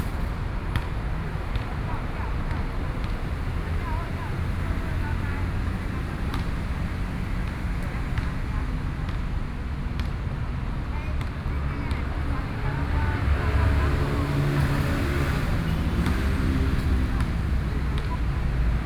in the Park, Traffic Noise, Woman talking, Play basketball, Sony PCM D50 + Soundman OKM II
Taoyuan County, Taiwan, September 2013